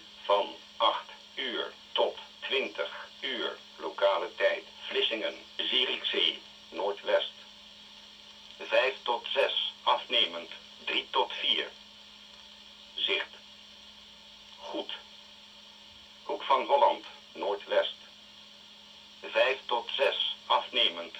listening to the wheather forecast of the netherlands coastguard at 19 p.m.
the city, the country & me: june 28, 2011
Workum, The Netherlands, 28 June 2011